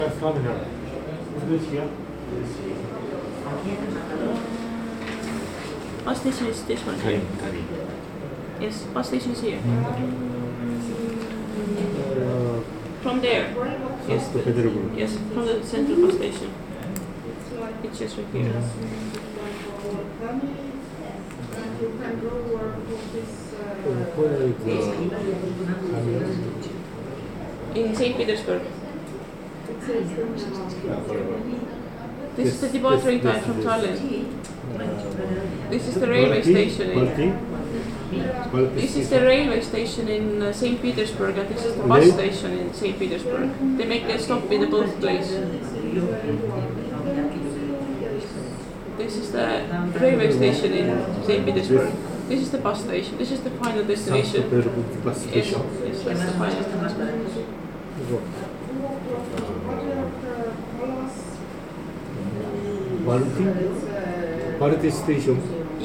Tourism info, Tallinn
tourist info, how to go to St.Petersburg from Tallinn
Tallinn, Estonia, 21 April 2011, 15:39